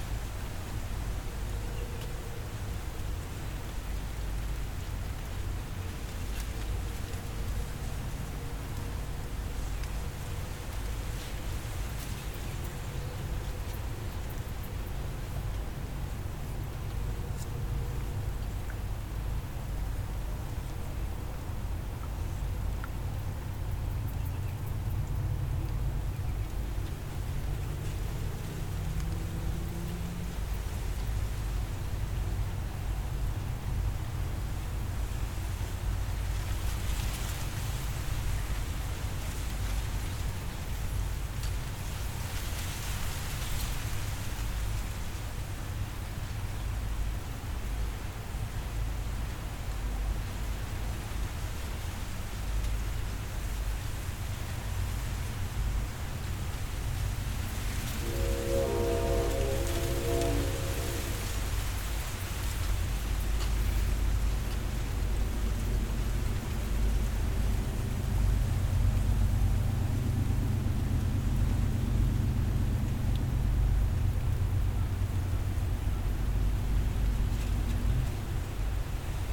{
  "title": "Warren Landing Rd, Garrison, NY, USA - Reeds, Constitution Marsh Audubon Center and Sanctuary",
  "date": "2020-09-19 17:30:00",
  "description": "Constitution Marsh Audubon Center and Sanctuary.\nSound of reeds, water, and the Metro-North train.\nZoom h6",
  "latitude": "41.40",
  "longitude": "-73.94",
  "altitude": "2",
  "timezone": "America/New_York"
}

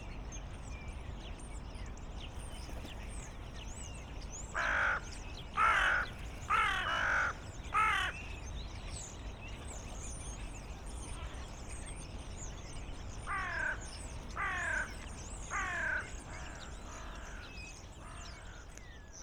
{
  "title": "Tempelhofer Feld, Berlin - dun crows",
  "date": "2018-12-22 15:25:00",
  "description": "Berlin, Templehofer Feld, historic airport area, Dun crows picking food, starlings in tree behind\n(SD702, AT BP4025)",
  "latitude": "52.48",
  "longitude": "13.40",
  "altitude": "48",
  "timezone": "GMT+1"
}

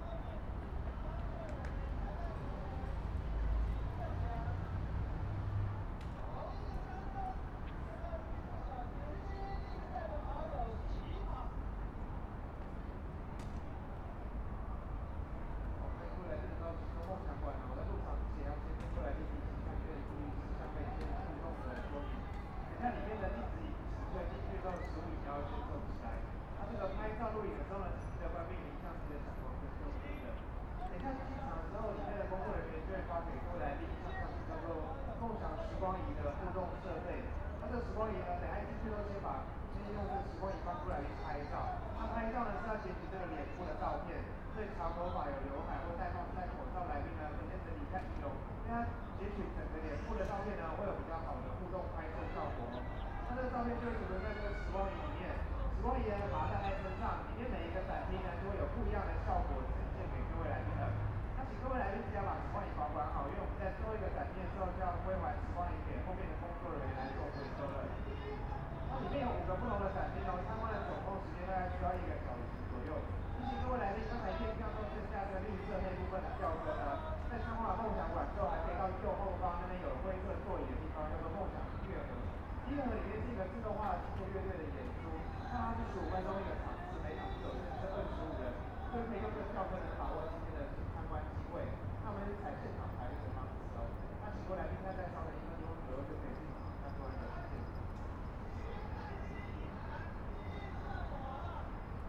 {
  "title": "Taipei EXPO Park, Taiwan - Sitting in the park",
  "date": "2014-02-15 15:00:00",
  "description": "Sitting in the park, Birds singing, Aircraft flying through, Traffic Sound, Binaural recordings, Zoom H4n+ Soundman OKM II",
  "latitude": "25.07",
  "longitude": "121.53",
  "timezone": "Asia/Taipei"
}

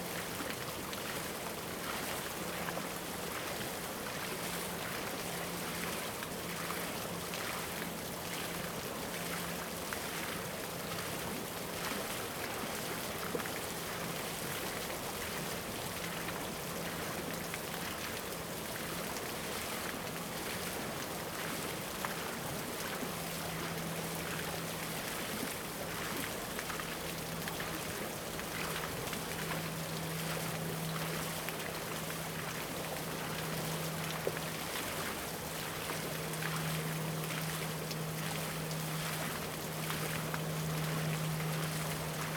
{
  "title": "五十甲公園, Ji'an Township - in the Park",
  "date": "2014-08-28 09:33:00",
  "description": "Stream of sound, Cicadas sound, Waterwheel, Hot weather\nZoom H2n MS+XY",
  "latitude": "23.95",
  "longitude": "121.53",
  "altitude": "90",
  "timezone": "Asia/Taipei"
}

